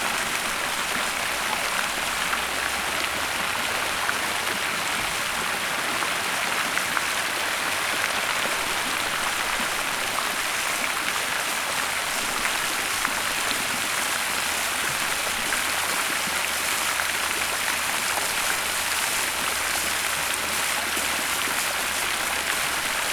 Latvia, Rundale, fountain at Rundale manor
great Rundale manor, with botanical garden and fountain